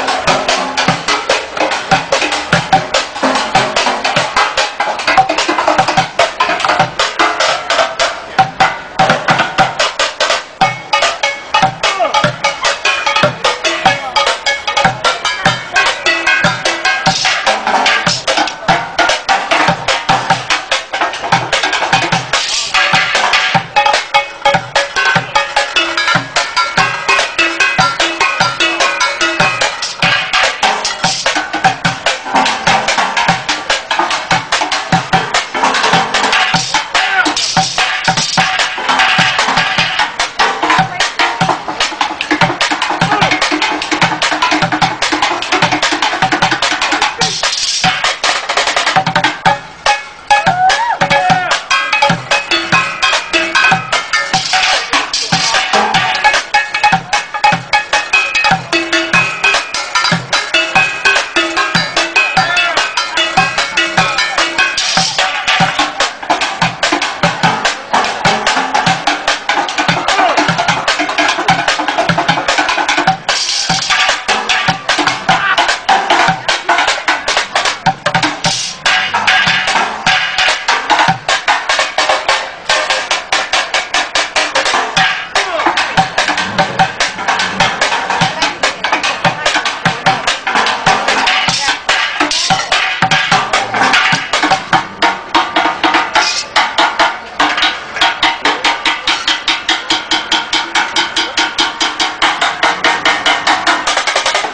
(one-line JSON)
{"title": "Beachfront, Durban, Drumming Street Busker", "date": "2009-01-15 11:49:00", "description": "Drumming on assorted improvised household objects on the street.", "latitude": "-29.85", "longitude": "31.04", "altitude": "12", "timezone": "Africa/Johannesburg"}